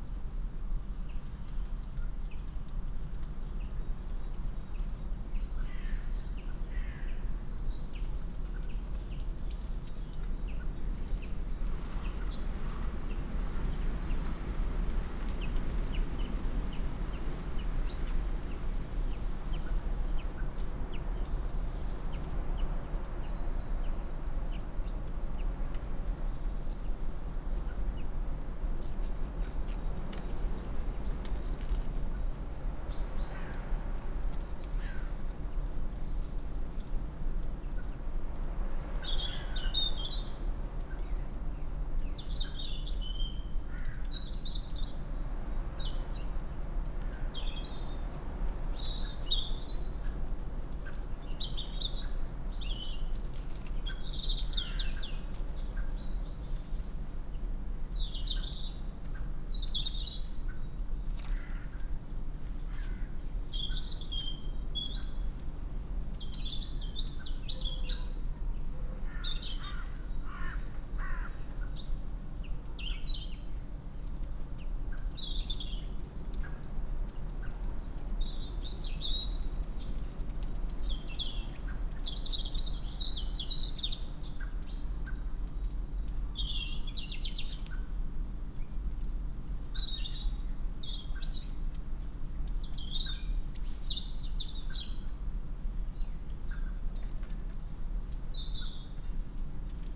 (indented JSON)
{
  "title": "Off Main Street, Helperthorpe, Malton, UK - churchyard soundscape ...",
  "date": "2019-12-29 07:50:00",
  "description": "church yard soundscape ... SASS ... bird calls from ... blackbird ... crow ... robin ... wren ... pheasant ... great tit ... tree sparrow ... long-tailed tit ... coal tit ... collared dove ... chaffinch ... wood pigeon ... treecreeper ... background noise ... dry leaves blown around ...",
  "latitude": "54.12",
  "longitude": "-0.54",
  "altitude": "84",
  "timezone": "Europe/London"
}